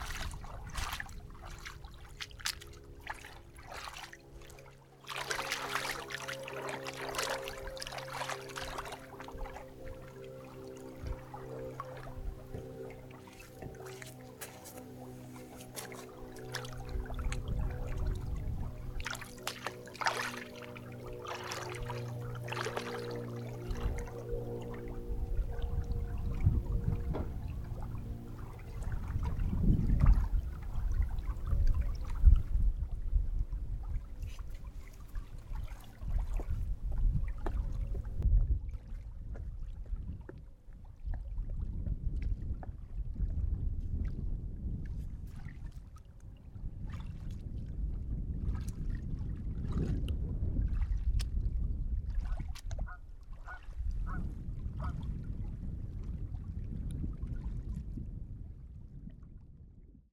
Sound recording by Zoe Soto. Wading in Kunkel Lake near the boathouse, Ouabache State Park, Bluffton, IN. Recorded at an Arts in the Parks Soundscape workshop at Ouabache State Park, Bluffton, IN. Sponsored by the Indiana Arts Commission and the Indiana Department of Natural Resources.
Bluffton, IN, USA, April 2019